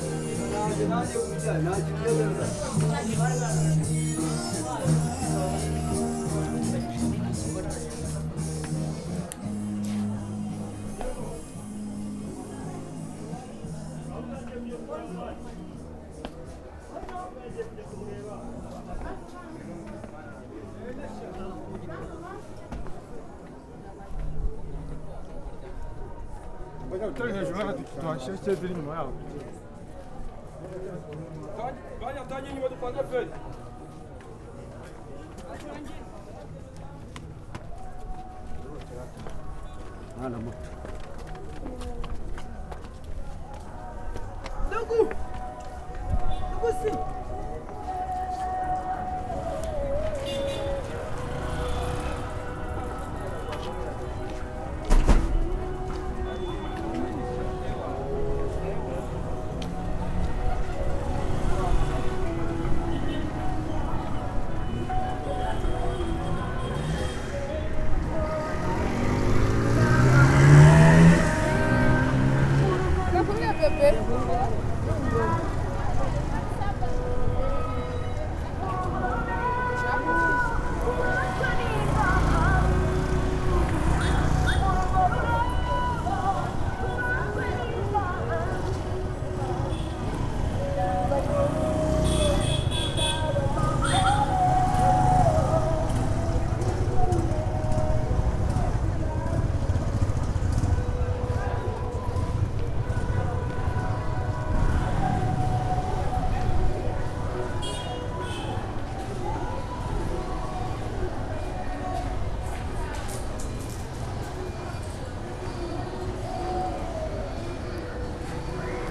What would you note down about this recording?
Butare Market, inside:voices, sewing machines, music on the radio, children, outside: cars and motorcycles, Edirol R9 recorder with built-in stereo microphone